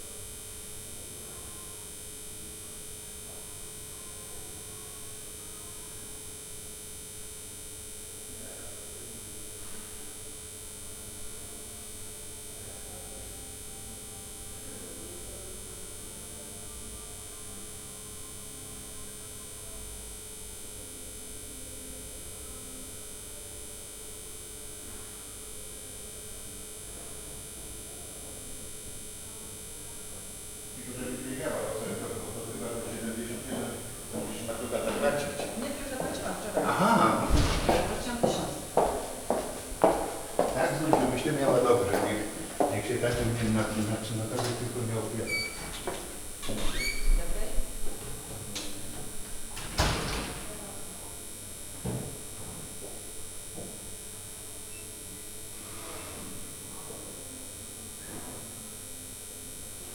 Poznan, Piatkowo district, library entrance - lamp buzz
hypnotic buzz of the lamps in the main hall of the library + distant sounds of the activity in the building